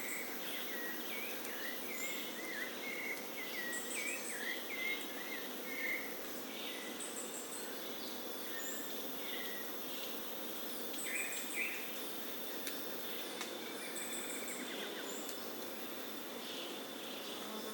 Parque da Cantareira - Núcleo do Engordador - Trilha da Cachoeira - iii
register of activity